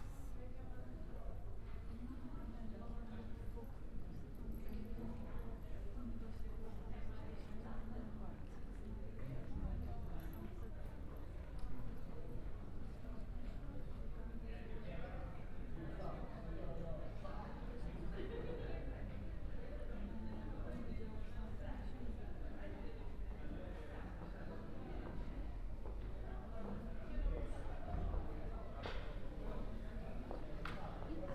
Bildmuseet. Umeå.
Bildmuseet (Art gallery, PA announcement)
Umeå, Sweden